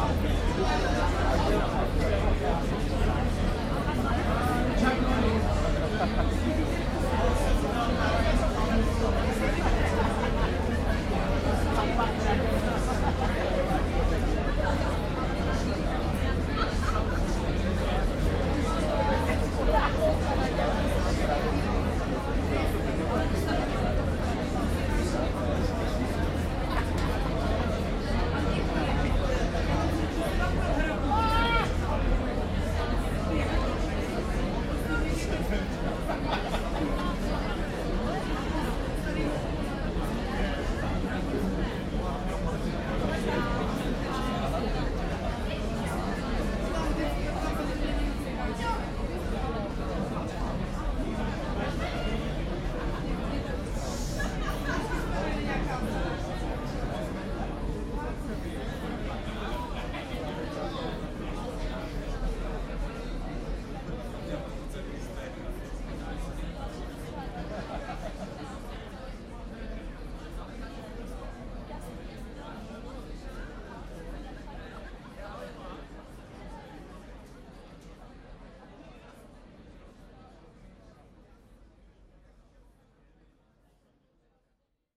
{"title": "Restaurant Výletná on Letná hil", "date": "2010-07-08 21:34:00", "description": "Summer evening at the busy Výletná open air bar. Nice view on Vltava, the opposite hill Vítkov.", "latitude": "50.10", "longitude": "14.43", "altitude": "214", "timezone": "Europe/Prague"}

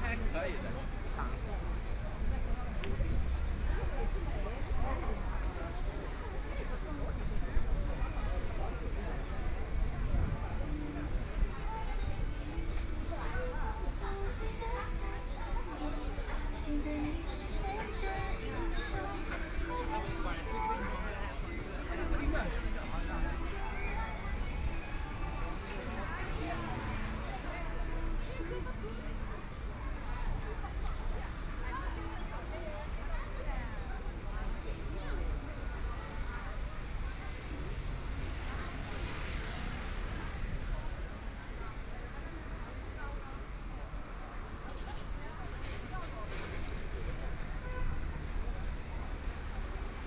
{"title": "Sweet love branch, Shanghai - soundwalk", "date": "2013-11-23 12:13:00", "description": "Walking along the street, The crowd and the sound of the store, Traffic Sound, Zoom H6+ Soundman OKM II", "latitude": "31.27", "longitude": "121.48", "altitude": "18", "timezone": "Asia/Shanghai"}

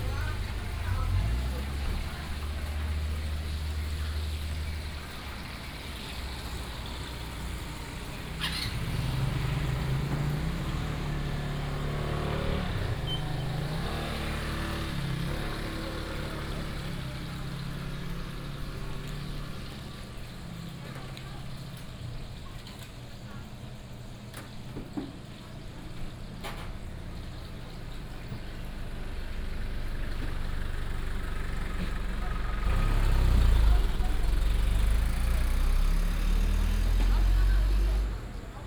{"title": "阿亮香雞排, 太麻里鄉大王路 - Fried chicken shop", "date": "2018-04-11 18:34:00", "description": "Fried chicken shop, traffic sound, on the street", "latitude": "22.61", "longitude": "121.01", "altitude": "16", "timezone": "Asia/Taipei"}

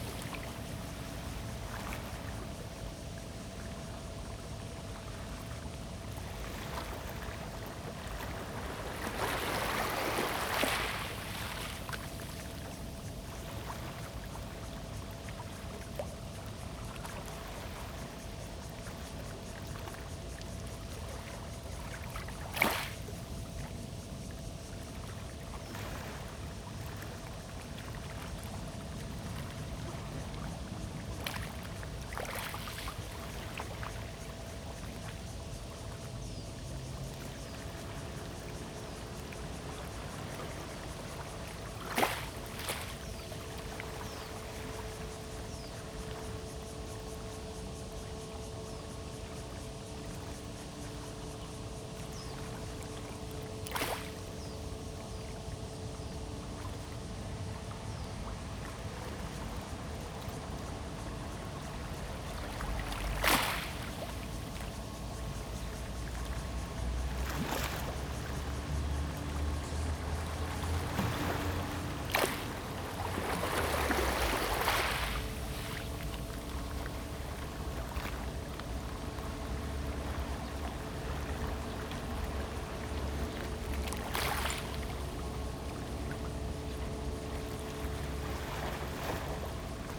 {"title": "Tamsui River, New Taipei City - Acoustic wave water", "date": "2015-07-18 06:47:00", "description": "Acoustic wave water, There are boats on the river\nZoom H2n MS+XY", "latitude": "25.17", "longitude": "121.43", "altitude": "3", "timezone": "Asia/Taipei"}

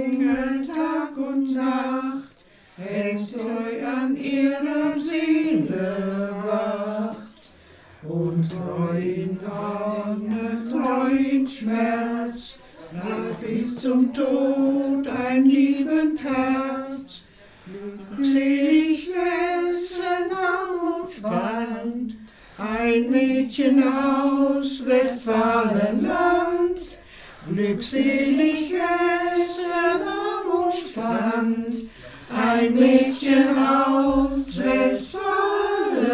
{"title": "berchum, alter hohlweg, the westfalia song", "date": "2009-08-07 17:36:00", "description": "family choir of the westfalia song on grand ma's 85's birthday\nsoundmap nrw: social ambiences/ listen to the people in & outdoor topographic field recordings", "latitude": "51.39", "longitude": "7.54", "altitude": "174", "timezone": "Europe/Berlin"}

